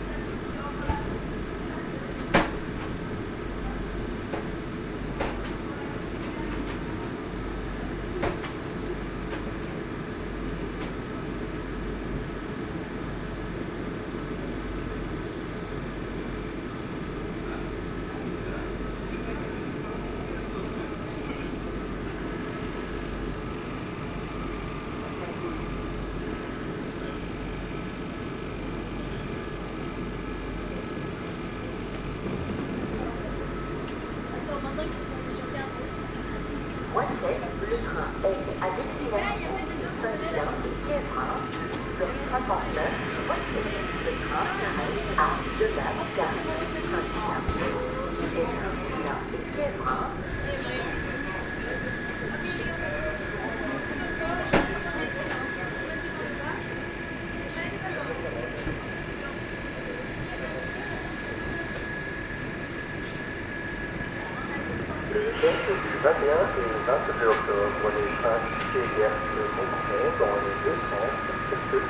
Mons, Belgium - K8strax race - Leaving the Mons station
After an exhausting race, our scouts are leaving us and going back home, sometimes very far (the most is 3 hours by train, the normal path is 1h30). Here, the 1250 scouts from Ottignies and near, are leaving using the train we ordered for us. Everybody is joking, playing with water in the wc, and activating the alarm system. Hüüh ! Stop boys ! At the end, train is leaving. At 10:47 mn, we can here the desperate station master saying : They are gone !!!